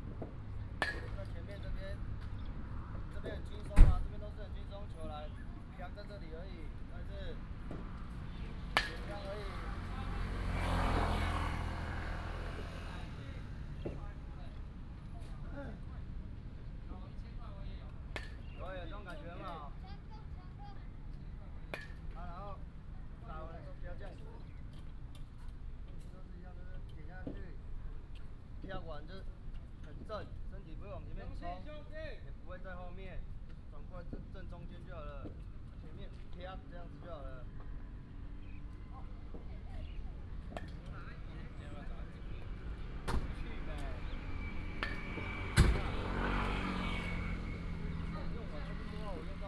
致善路二段, Dayuan Dist., Taoyuan City - Junior baseball field
Junior baseball field
Taoyuan City, Taiwan, 18 August 2017